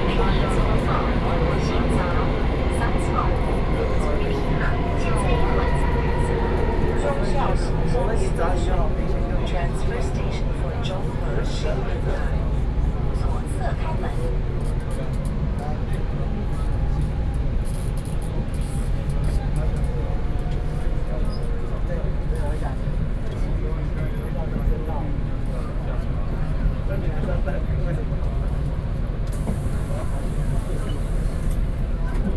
Daan District, Taipei City, Taiwan - in the MRT train